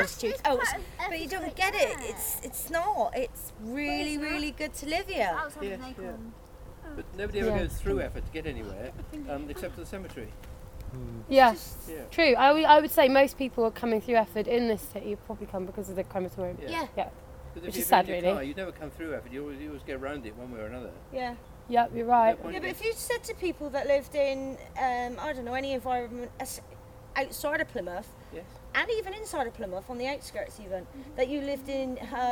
Walk Three: Living in Efford